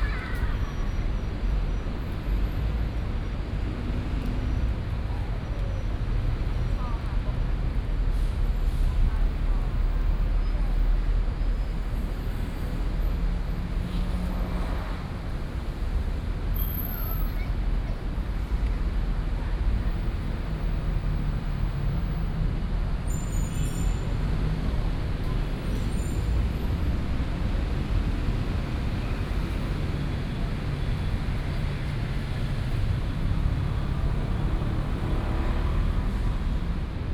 平安公園, Da’an Dist., Taipei City - in the Park
in the Park
Taipei City, Taiwan, 28 July